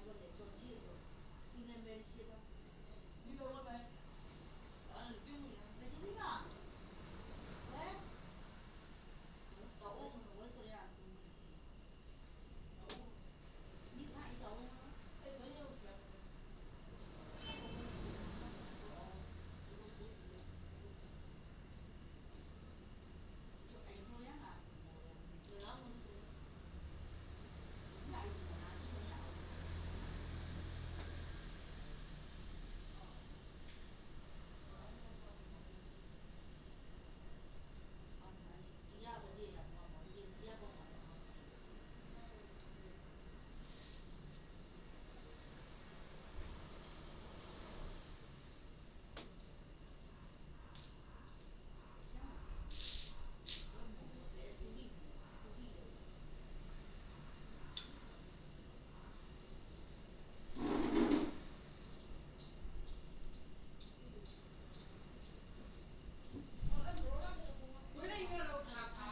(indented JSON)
{
  "title": "Beigan Township, Taiwan - Small village",
  "date": "2014-10-13 19:51:00",
  "description": "Sound of the waves, Elderly, Small village",
  "latitude": "26.22",
  "longitude": "120.00",
  "altitude": "15",
  "timezone": "Asia/Taipei"
}